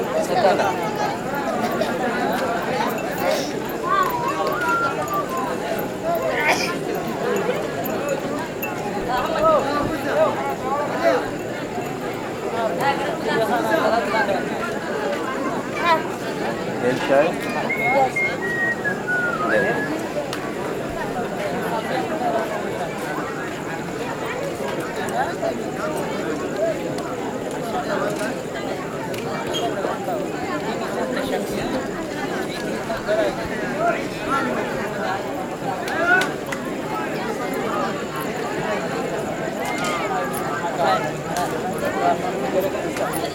massawa eritrea, it was early evening, hot and dark already and i stumbled upon this market full sellers customers and lights. The exact location i do not know